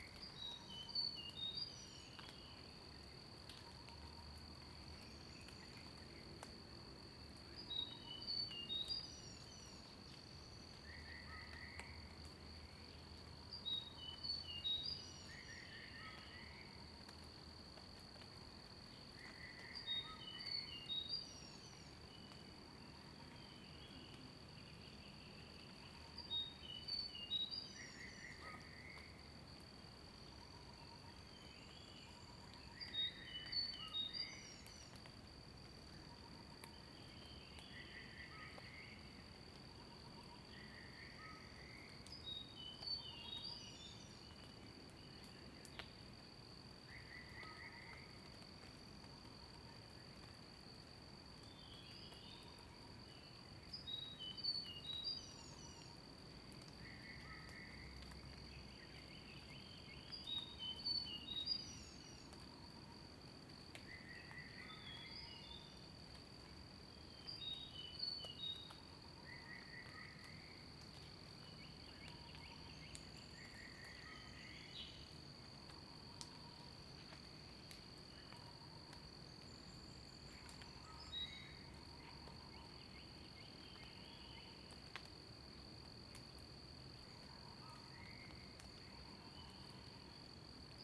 水上, 埔里鎮桃米里, Taiwan - In the woods
In the woods, birds sound
Zoom H2n MS+XY